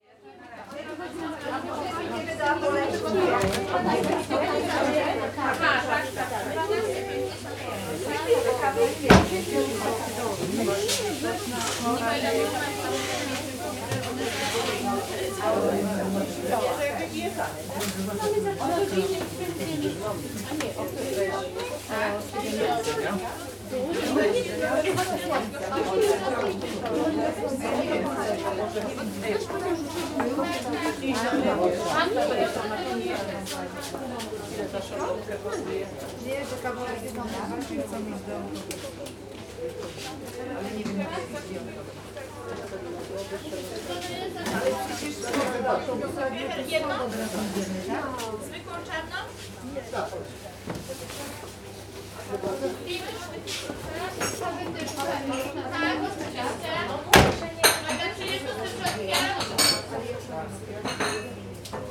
Kornik, imbis/café in front of the castle - busy café
café full with visitors. people making orders, going in and out of the place, clank of cutlery, puff of kitchen machinery.